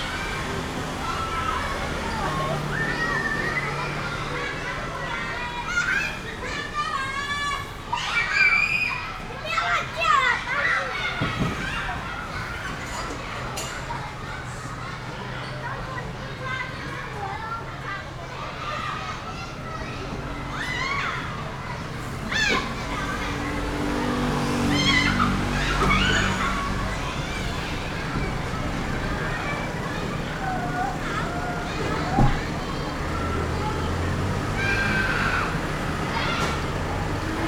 Fude St., Nangang Dist., Taipei City - The corner
School pupils are game sound with road noise, Rode NT4+Zoom H4n
台北市 (Taipei City), 中華民國, 6 March 2012, 13:24